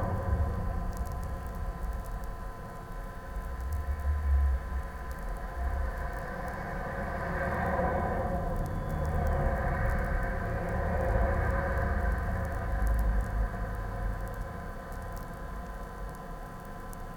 study of abandoned railway bridge over the highway. contact microphones on the rails and electromagnetic antenna Priezor for the electro field